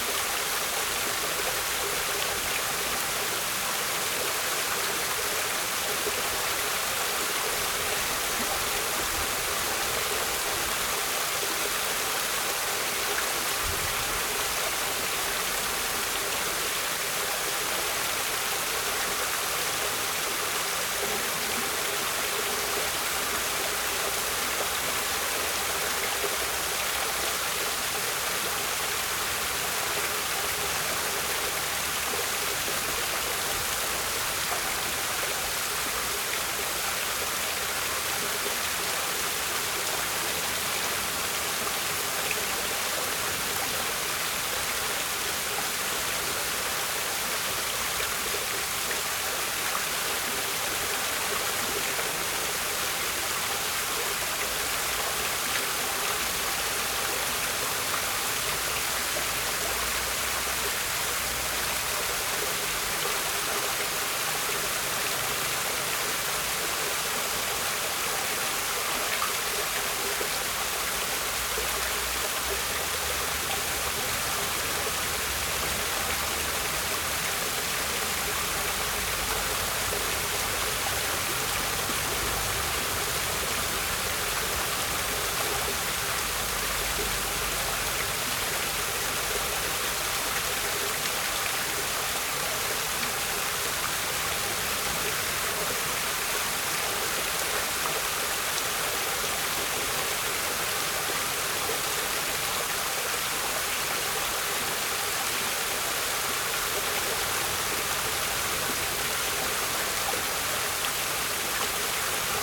Lisbon, Portugal - Luminous Fountain (R side), Lisbon
Luminous Fountain in Alameda, Lisbon.
Zoom H6